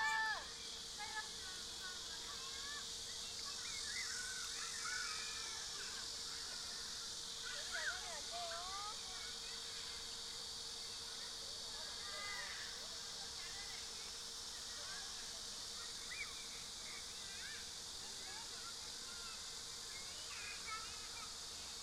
near the Gwangju Art Museum - near the Gwangju Museum of Art
Buk-gu, Gwangju, South Korea